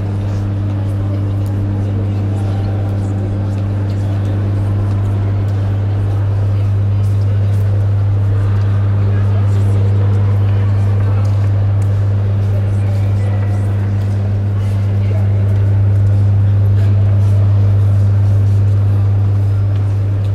Tate Modern entry hall drone London UK